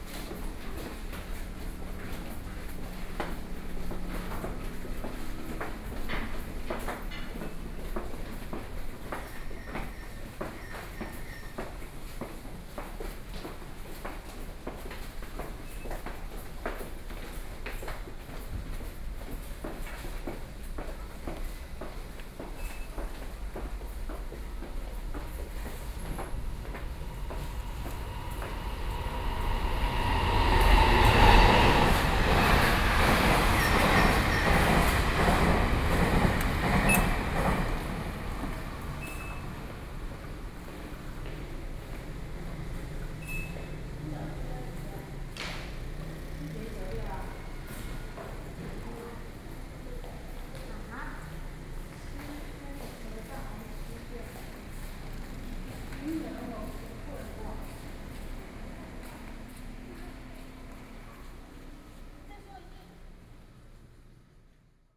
Fugang Station, Taoyuan County - soundwalk
After taking the elevator from the platform, And then out of the station, Sony PCM D50+ Soundman OKM II